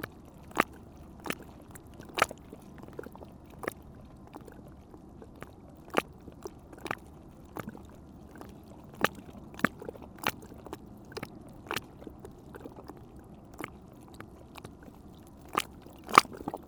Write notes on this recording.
The river Seine makes small waves in a hole on the river bank.